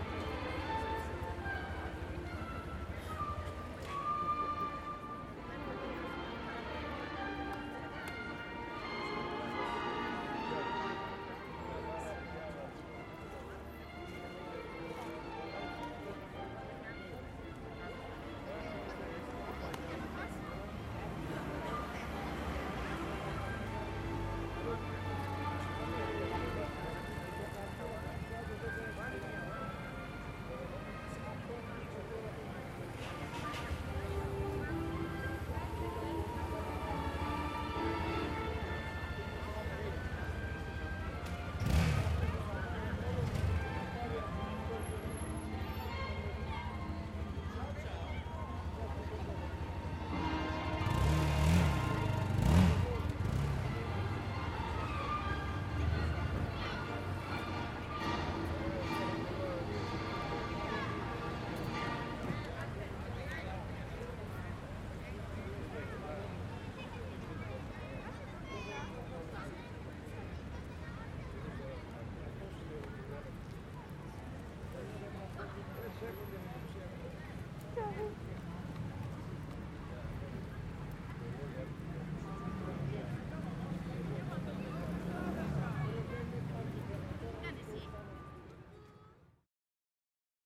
Οκτωβρίου, Ξάνθη, Ελλάδα - Eleftherias Square/ Πλατεία Ελευθερίας- 20:00
Mild traffic, people passing by talking, music playing on speakers.
Περιφέρεια Ανατολικής Μακεδονίας και Θράκης, Αποκεντρωμένη Διοίκηση Μακεδονίας - Θράκης, May 2020